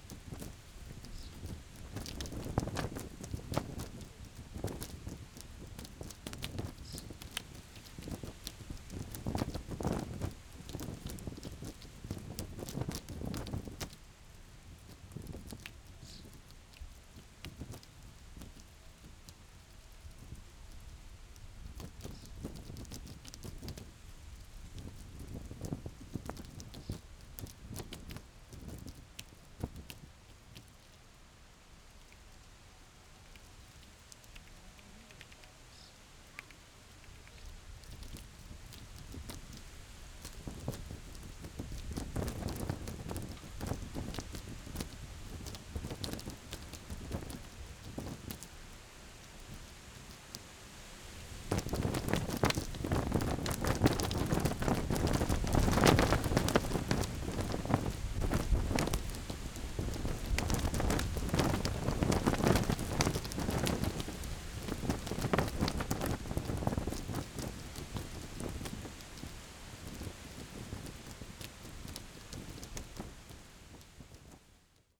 Tempelhofer Feld, Berlin, Deutschland - fluttering barrier tape
the barrier tape around a small grasland und a tree flutters in the a moderate breeze.
(tech: SD702 2xNT1a)